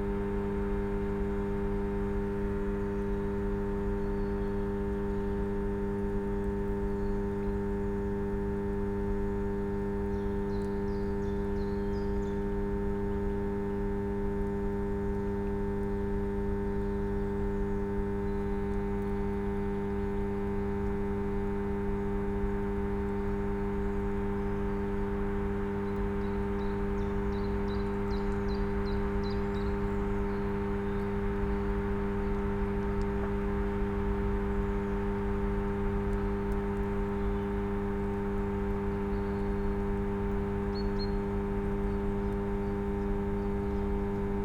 Am Sandhaus, Berlin-Buch, Deutschland - transformer station hum
former Stasi / GDR government hospital area, transformer station hum (still in operation)
(Sony PCM D50, DPA4060)
Berlin, Germany, 30 March, 14:15